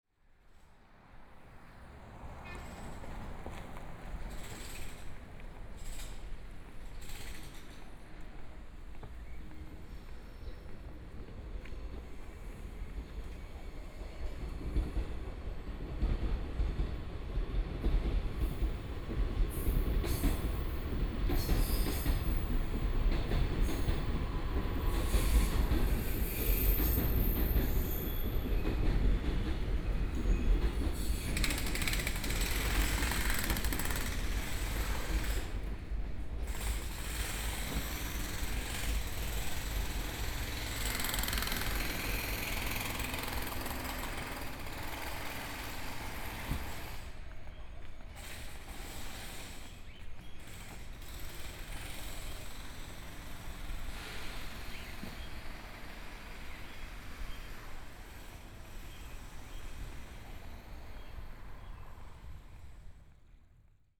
Miaoli City, Taiwan - Train traveling through
Construction noise, Train traveling through, Zoom H4n+ Soundman OKM II
Miaoli City, Miaoli County, Taiwan